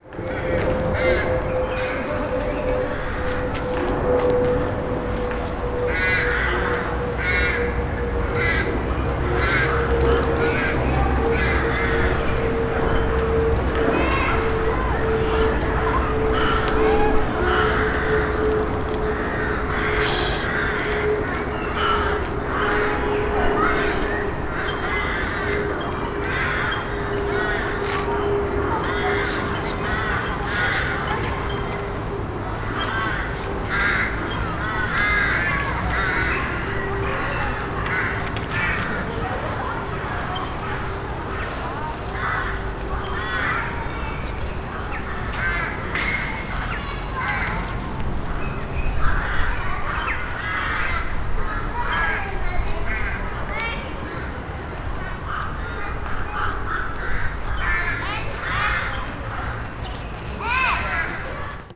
Hautepierre, Strasbourg, France - Parc Corbeaux
Enregistré par les éleves de l'ecole Jacqueline dans le cadre d'ateliers periscolaires de création sonore
21 March 2014, ~17:00